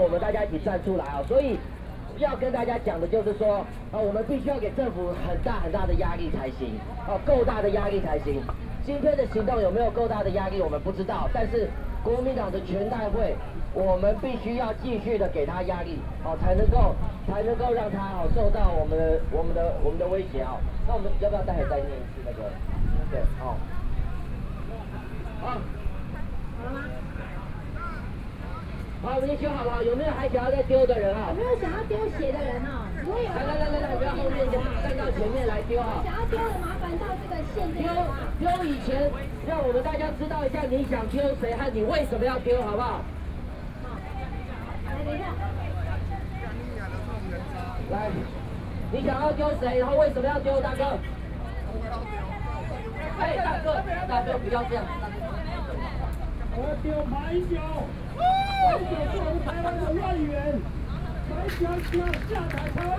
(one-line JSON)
{"title": "Chiang Kai-Shek Memorial Hall, Taipei City - Labor protests", "date": "2013-10-10 14:37:00", "description": "Shouting slogans, Labor protests, Lost shoe incident, Binaural recordings, Sony PCM D50 + Soundman OKM II", "latitude": "25.04", "longitude": "121.52", "altitude": "8", "timezone": "Asia/Taipei"}